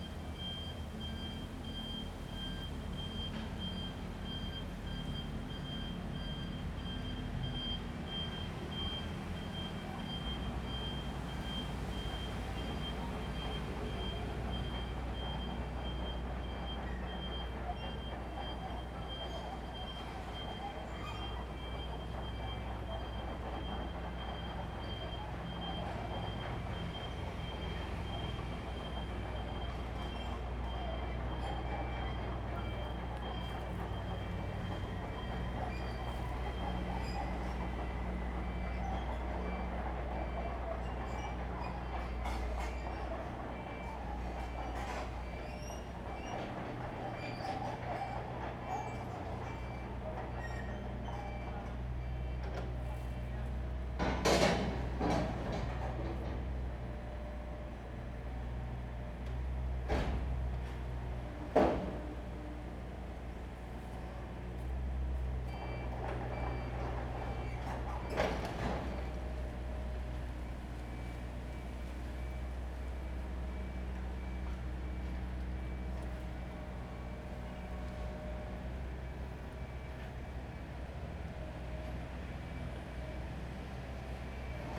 Xingzhu St., East Dist., Hsinchu City - Close to the rails
Traffic sound, Train traveling through, Construction sound
Zoom H2n MS+XY